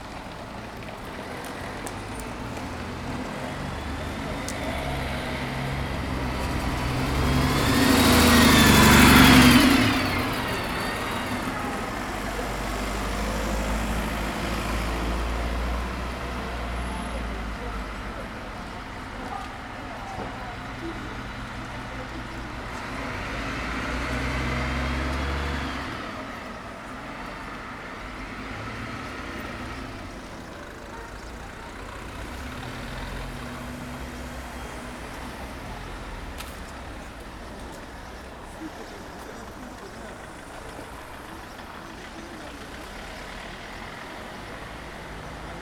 Rue Gabriel Péri, Saint-Denis, France - Intersection of R. Lanne + R. Gabriel Péri
This recording is one of a series of recording, mapping the changing soundscape around St Denis (Recorded with the on-board microphones of a Tascam DR-40).